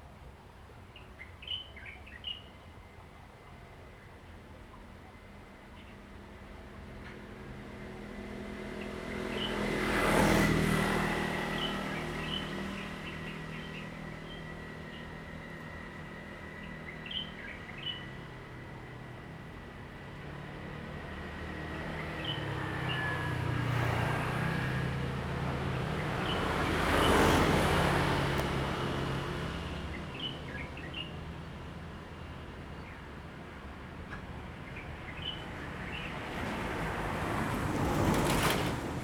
Kangding St., Taitung City - Small village
Small village, Birdsong, Traffic Sound, Very hot weather
Zoom H2n MS + XY